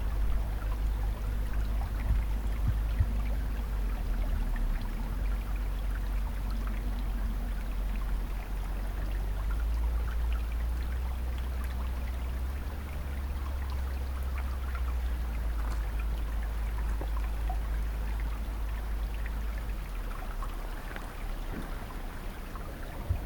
A lovely day in the Quantocks walking from Dead Woman's Ditch to Higher Hare Knap and down through Somerton Combe and back up towards Black Hill. A few ossicle shots of sound as we walked together and mixed into a Quantock Composition using an Olympus LS 14 with onboard mics